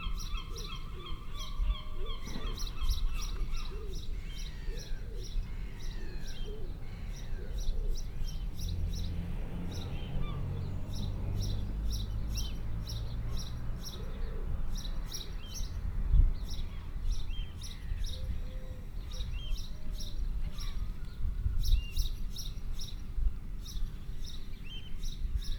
Wells-Next-the-Sea, Norfolk, UK - Well quayside
Sitting on the quayside on World Listening Day watching the mist lift over the boats at Wells. Binaural recording best enjoyed on headphones.